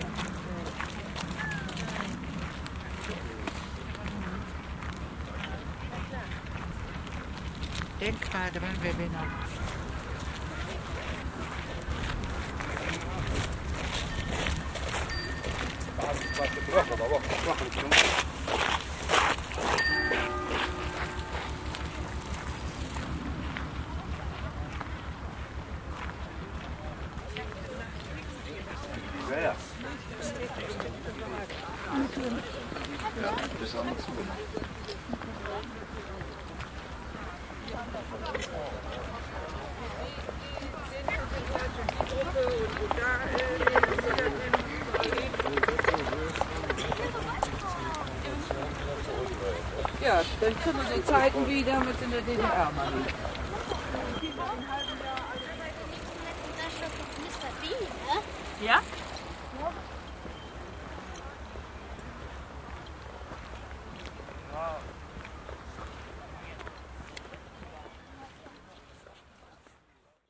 monheim, rheindeich, sonntagsspaziergänger
konversationen flanierender sonntagsspaziergänger
project: :resonanzen - neanderland soundmap nrw: social ambiences/ listen to the people - in & outdoor nearfield recordings
18 April 2008, 13:36